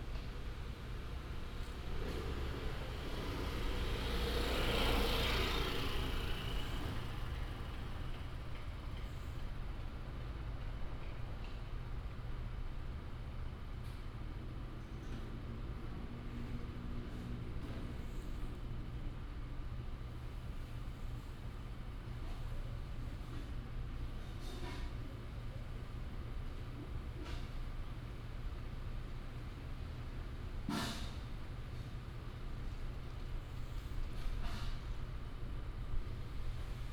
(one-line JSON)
{"title": "東光新村, Hsinchu City - In the quiet old community", "date": "2017-09-27 16:34:00", "description": "In the quiet old community, traffic sound, Binaural recordings, Sony PCM D100+ Soundman OKM II", "latitude": "24.80", "longitude": "120.99", "altitude": "39", "timezone": "Asia/Taipei"}